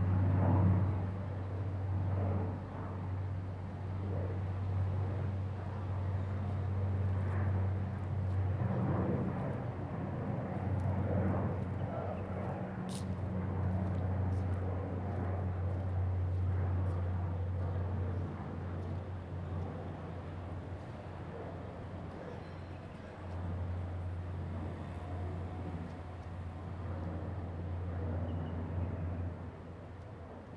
{"title": "新湖漁港, Jinhu Township - In the fishing port", "date": "2014-11-03 16:09:00", "description": "Birds singing, In the fishing port, Aircraft flying through\nZoom H2n MS+XY", "latitude": "24.43", "longitude": "118.41", "altitude": "8", "timezone": "Asia/Taipei"}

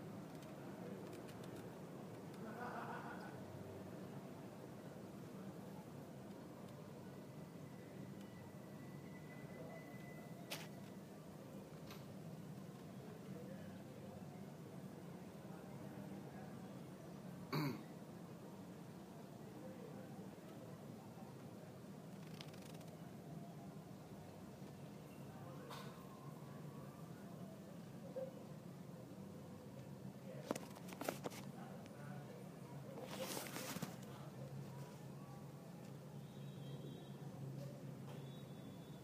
{"title": "La Paz, Bolivia - 254 montevideo", "date": "2012-10-25 09:37:00", "description": "sonidos de la manana", "latitude": "-16.51", "longitude": "-68.13", "altitude": "3584", "timezone": "America/La_Paz"}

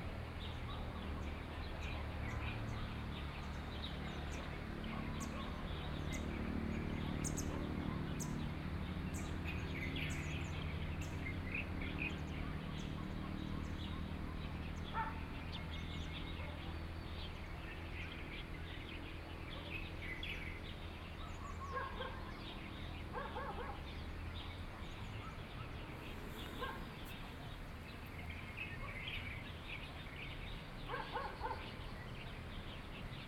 {"title": "112台灣台北市北投區林泉里 - bird", "date": "2012-11-09 06:21:00", "latitude": "25.14", "longitude": "121.52", "altitude": "143", "timezone": "Asia/Taipei"}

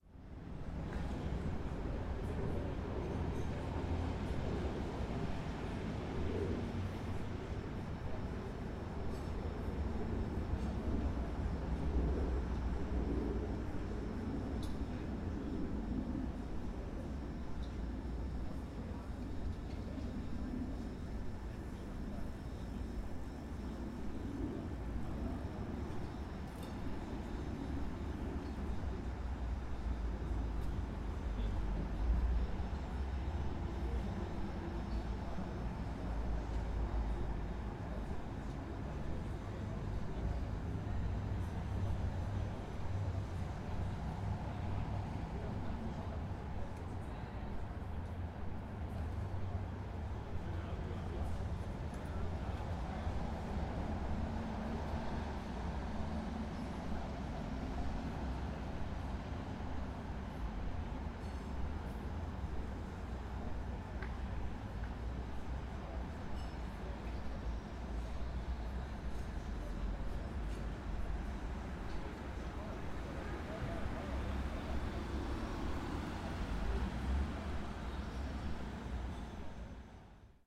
{"title": "Escher Wyss, Zürich, Sound and the City - Sound and the City #01", "date": "2012-07-18 20:17:00", "description": "Unterlegt von einem hochtönigen Drone, der aus einem Stromverteilerkasten stammen mag, sind Umgebungsgeräusche eines Restaurants, Tellerklappern, sprachliches Gemurmel zu hören, dazu die Verkehrsgeräusche der naheliegenden Verkehrsachse (Autos, Trams), darüber ein Flugzeug, von Windbewegungen vielfach verzogen. Sie versammeln sich gut durchhörbar in dem platzartigen, von niedrigen Häusern und dem Schiffbau gesäumten Aussenraum.\nArt and the City: Saâdane Afif (The Soapbox of Schiffbauplatz, 2012)", "latitude": "47.39", "longitude": "8.52", "altitude": "402", "timezone": "Europe/Zurich"}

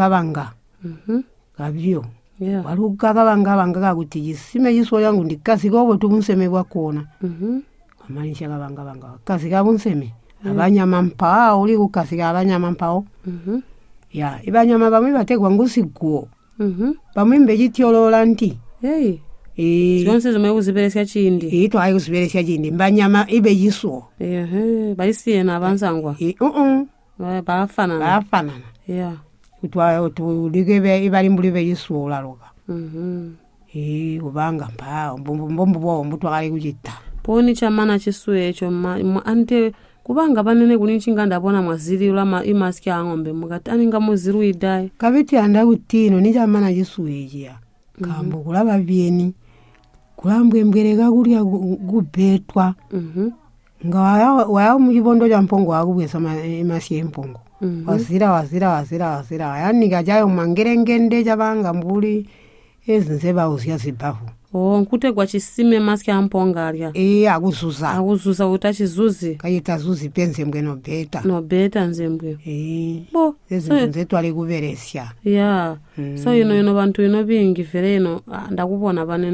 {"title": "Chinonge, Binga, Zimbabwe - Banene, how did you used to weave those baskets...", "date": "2016-07-17 10:23:00", "description": "Eunice asks her grandmother about how the BaTonga women used to weave the large, heavy-duty baskets. Banene describes how to prepare the Malala leaves (Palm leaves) for the weaving. These baskets are used by BaTonga women in the field work.", "latitude": "-17.99", "longitude": "27.45", "altitude": "840", "timezone": "GMT+1"}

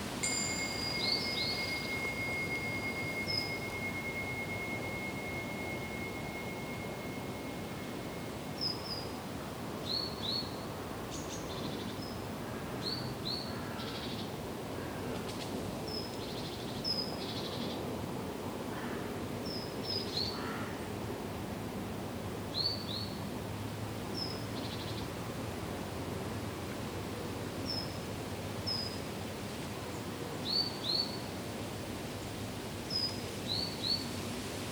{"title": "Hill Side, Lancaster, UK - Lancaster Priory Churchyard", "date": "2017-08-13 06:55:00", "description": "An early morning meditation in the grounds of Lancaster Priory. Recorded with the coincident pair of built-in microphones on a Tascam DR-40 (with windshield on and 75Hz low cut).", "latitude": "54.05", "longitude": "-2.81", "altitude": "31", "timezone": "Europe/London"}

